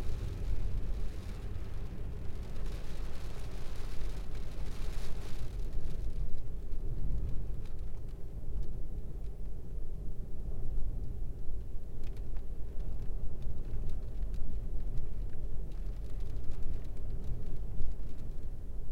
In the car in the layby off the, Ipsden, Oxfordshire, UK - Layby with traffic, wind, rain on windscreen, and weather bass
I have been exploring the soundscape of my commute and listening specifically in my car along my most regularly driven route - the A4074. This is the sound inside the car in the middle of a windy and rainy storm, with the wind buffeting the vehicle and the passing wash of the traffic. It's a bit low as I had the mics down quite low to cope with the hardcore rumble of the road.